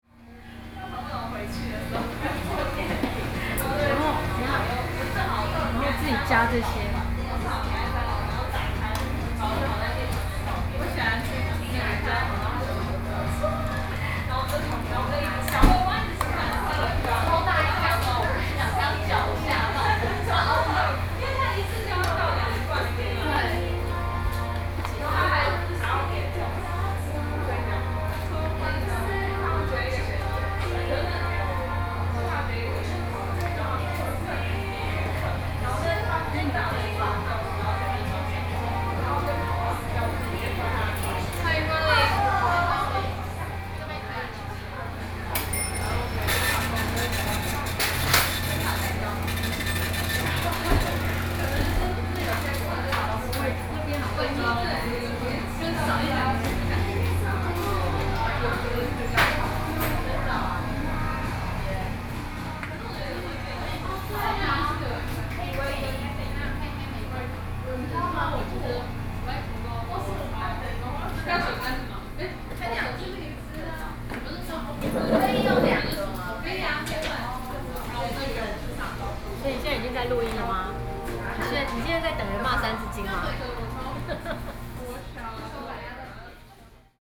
Aly., Ln., Sec., Zhongxiao E. Rd., Taipei City - Ice cream shop
inside the Ice cream shop, Sony PCM D50 + Soundman OKM II